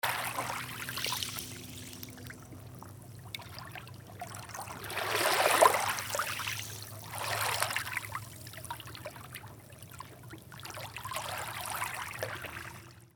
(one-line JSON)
{"title": "Costabela, Rijeka, sea", "date": "2010-03-13 14:06:00", "description": "sea sounds on the beach.", "latitude": "45.35", "longitude": "14.35", "timezone": "Europe/Zagreb"}